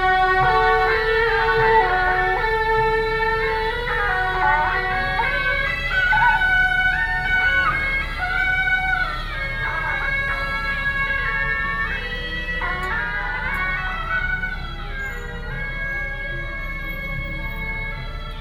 車路頭街, Sanchong Dist., New Taipei City - Traditional temple festivals